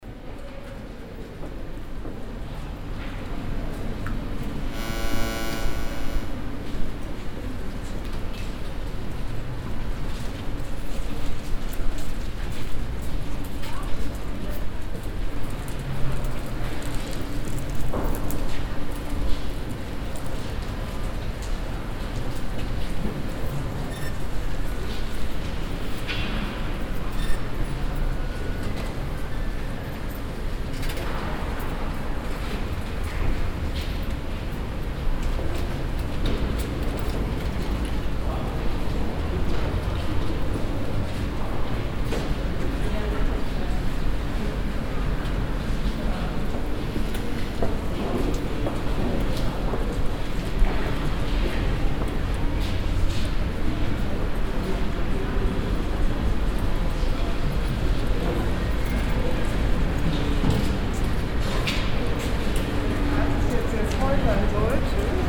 cologne, wdr arcade, rondell and ventilation
inside the wdr arcade, the sound of the rondell architecture and the ventilation. walk to the exit door
soundmap nrw: social ambiences and topographic field recordings